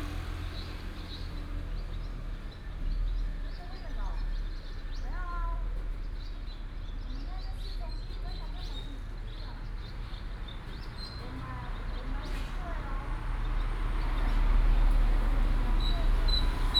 溫泉路125號, Checheng Township - Small village
In front of the store, traffic sound, Dog barking, Birds sound, Small village
Pingtung County, Taiwan, 2 April 2018, 17:44